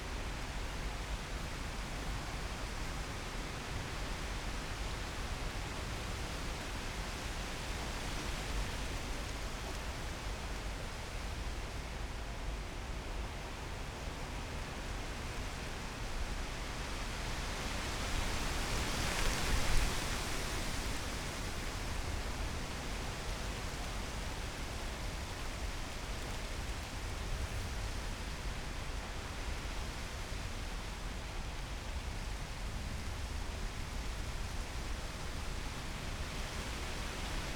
fresh breeze in poplar trees, bright summer Monday afternoon.
(Sony PCM D50, Primo EM172)
19 August 2019, 15:30